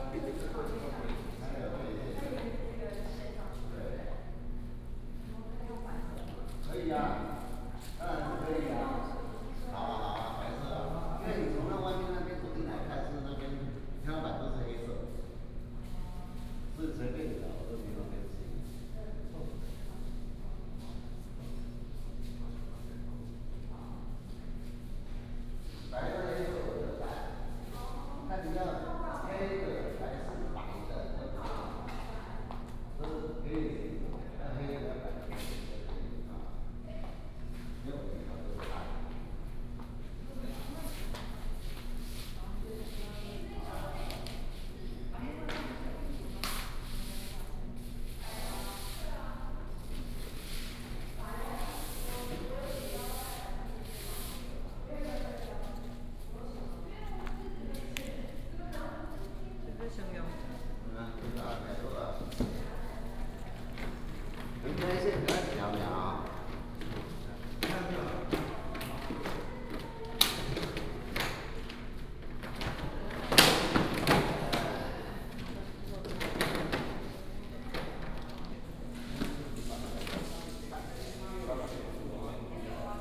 New Taipei City Art Center, Taiwan - In the gallery
In the gallery, Electrician under construction